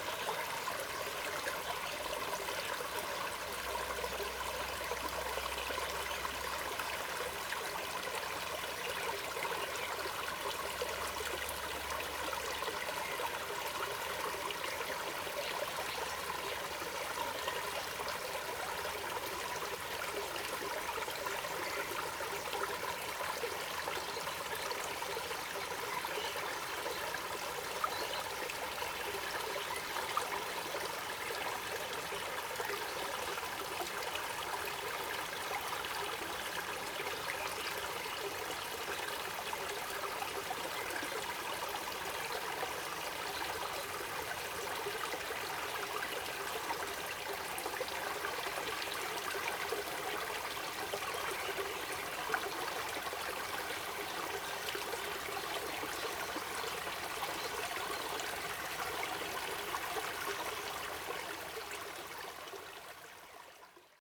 中路坑溪, 埔里鎮桃米里, Taiwan - Bird and Stream
Bird sounds, small Stream
Zoom H2n MS+XY